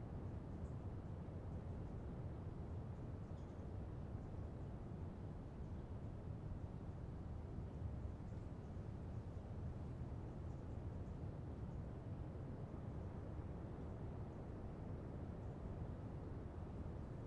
Valdivia, Chili - LCQA AMB PUNTA CURIÑANCO FOREST QUIET SOME BIRDS MS MKH MATRICED
This is a recording of a forest in the Área costera protegida Punta Curiñanco. I used Sennheiser MS microphones (MKH8050 MKH30) and a Sound Devices 633.